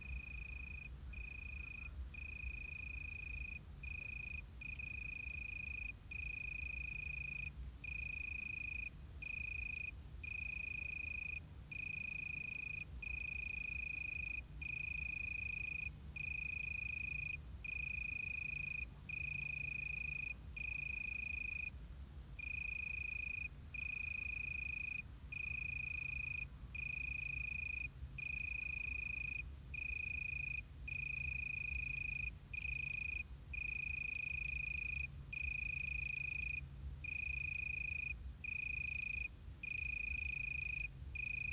Solesmeser Str., Bad Berka, Deutschland - Suburban Germany: Crickets of Summer Nights 2022-No.2
Documenting acoustic phenomena of summer nights in Germany in the year 2022.
*Binaural. Headphones recommended for spatial immersion.